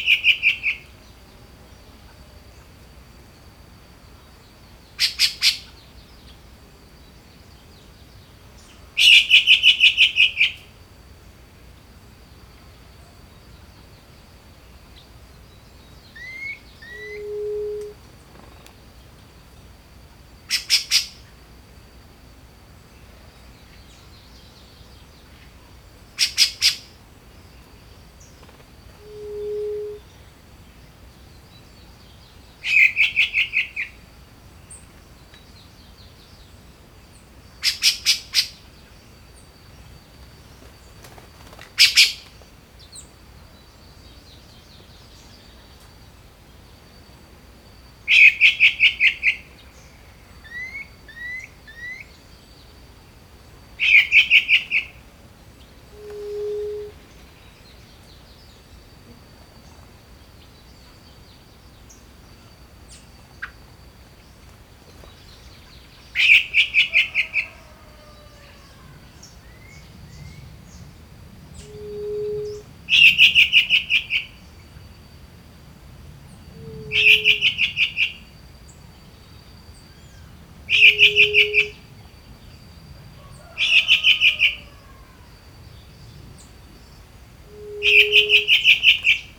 Rio Acima, Minas Gerais - Birds in the countryside at dawn, river in background in Brazil (Minas de Gerais)
Birds are singing at dawn in the countryside close to Rio Acima, MG, Brazil.
River in background.
Recorded by a MS Setup Schoeps CCM41+CCM8 in Cinela Zephyx Windscreen.
Recorder Sound Devices 633.
Sound Reference: BRA170304T06
Recorded at 5h30AM
Rio Acima - MG, Brazil, 4 March 2017, 05:30